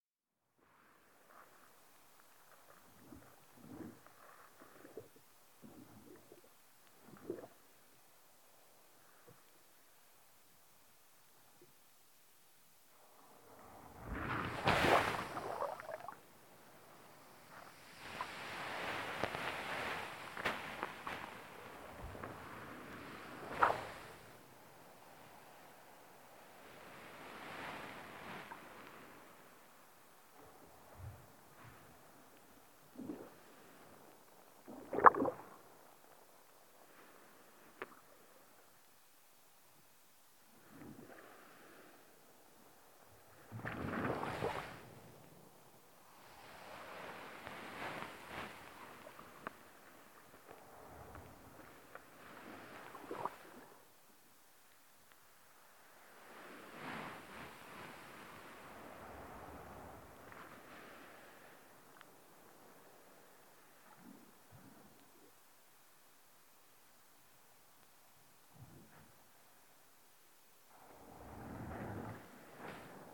Under the sand around St Ninian's Isle, Shetland - Listening under the sand
The hydrophones made by Jez Riley French are slightly buoyant which is often a good thing, but less so when trying to record in forceful waters which tend to drag them around quite a lot. To solve this problem and also to be able to hear the sea turning the sand about on its bed, I buried my hydrophones in the sand and listened to the tide working above them. Recorded with hydrophones made by Jez Riley French and FOSTEX FR-2LE.
Shetland Islands, UK